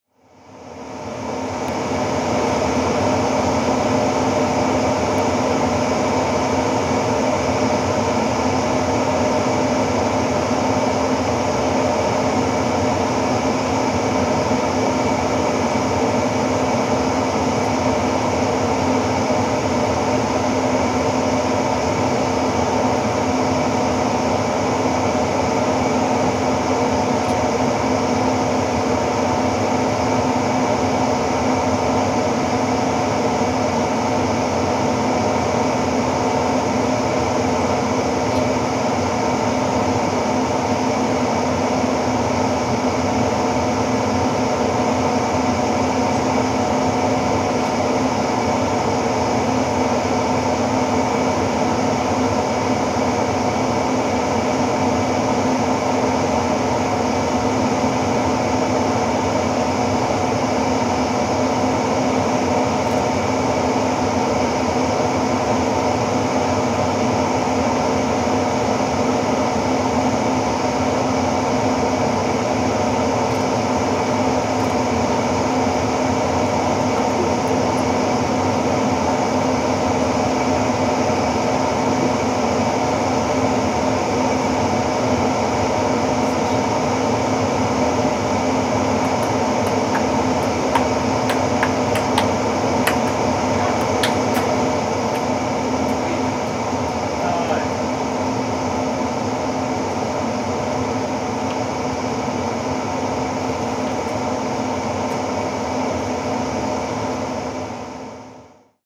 Recordist: Saso Puckovski
Description: Outdoor ventilation spot, near a ping pong table, behind the building. Industrial sound and ping pong game in the background. Recorded with ZOOM H2N Handy Recorder.
Nida, Lithuania, August 2016